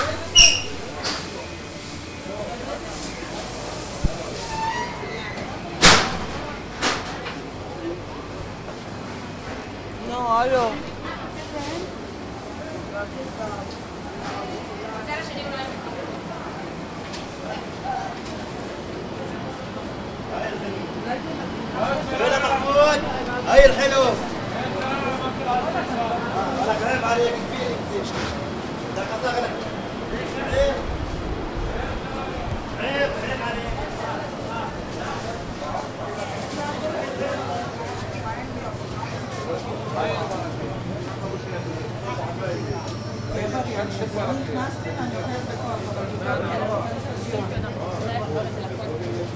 Mahane Yehuda Market - Weekend at Mahane Yehuda Market
Friday morning at Mahane Yehuda Market. Busiest time of the week. Locals as well as tourists are spending time at restaurants and buying fresh products. Peddlers are enthusiastic to sell their goods before the market is closed for Shabbat, shouting over special prices. Chabad followers are offering the men crowd to put Tefillin. Loud music is coming out the stoles and cafes. A panhandler is begging people for some money. A chick is basking singing songs.
נפת ירושלים, מחוז ירושלים, ישראל, 12 November 2021, 11:00